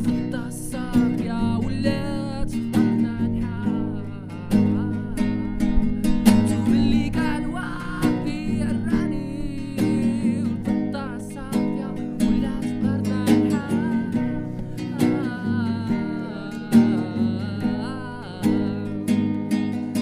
Taza, bank al maghribe. Fall 2010
Song with some friends I met.